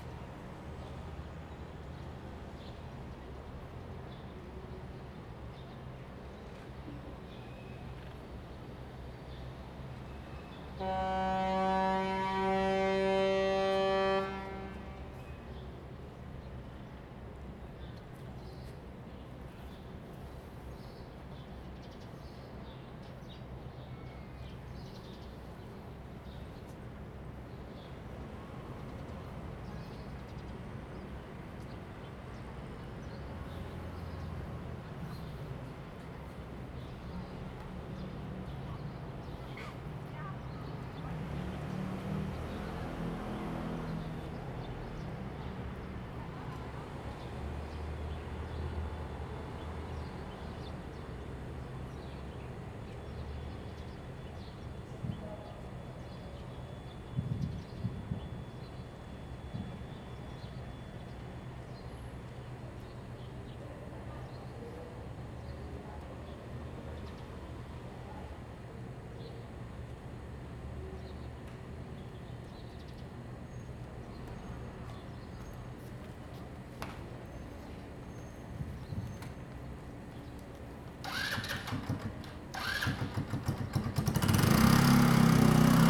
Liuqiu Township, Taiwan - Near the harbor
Near the harbor, In the square in front of the temple, Cruises and Yachts, Whistle sound
Zoom H2n MS +XY
Pingtung County, Taiwan, 1 November, 09:03